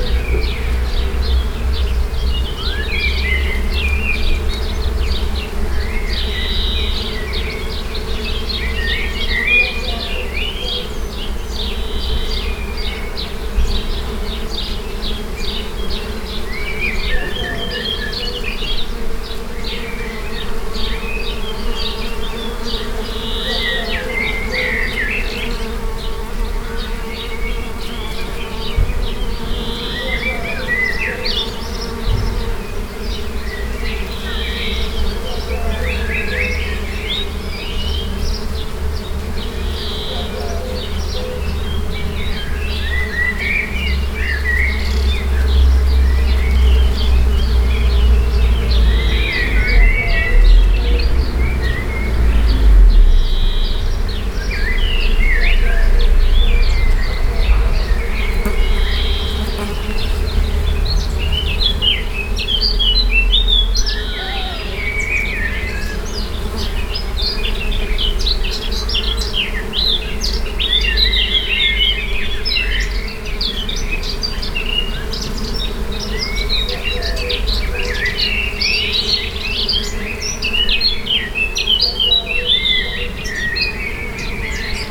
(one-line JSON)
{"title": "Yzeure, Rue des Trois Pressoirs, Bees and birds", "date": "2011-05-21 13:44:00", "description": "France, Auvergne, Yzeure, Bees, birds, binaural", "latitude": "46.56", "longitude": "3.35", "altitude": "241", "timezone": "Europe/Paris"}